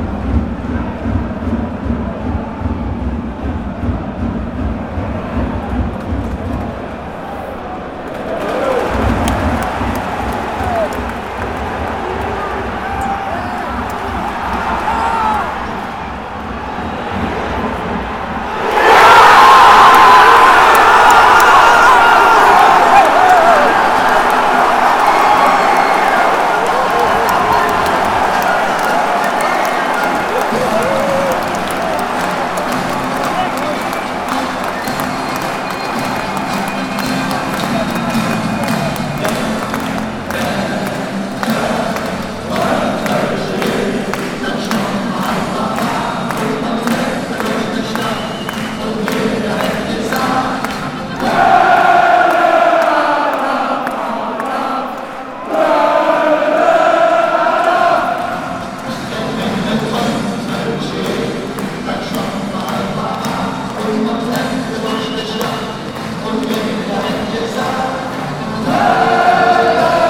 Cologne, Rheinenergie-Stadion, Deutschland - Goal for Cologne
Cologne scores the second goal in the match against Union Berlin (final result 4:0)and the fans celebrate it chanting the Cologne carnival song "un wenn et trömmelche jeht"